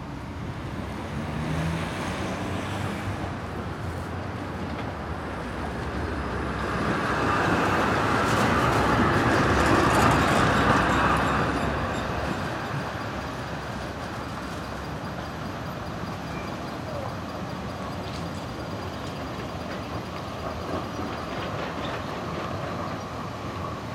Poznan, downtown, Roosevelt street - in front of construction site
recorded at a tram stop, next to a big construction site of a new tram station. various sounds of construction workers moving and dropping building materials, shouting commands at each other. lots of traffic, trams passing in front of the mics, people going in all directions.